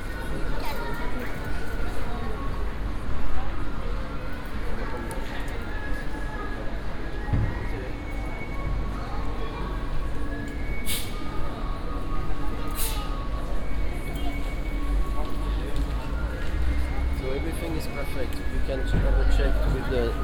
Gare dAngers Saint-Laud, Angers, France - (599) entering Gare dAngers Saint-Laud
Entering Gare d'Angers Saint-Laud.
recorded with Soundman OKM + Sony D100
sound posted by Katarzyna Trzeciak
Pays de la Loire, France métropolitaine, France